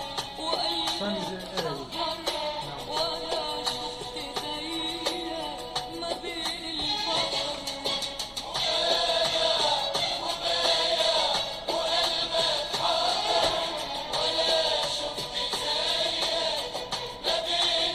:halabja: :radio dange nwe: - one